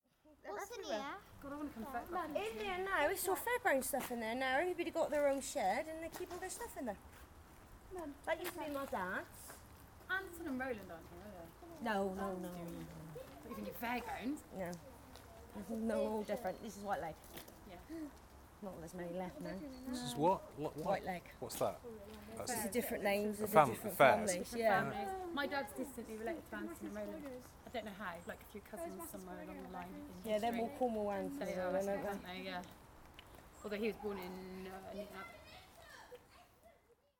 Efford Walk One: About the fort sheds and fairground families - About the fort sheds and fairground families
Plymouth, UK, 14 September, 18:35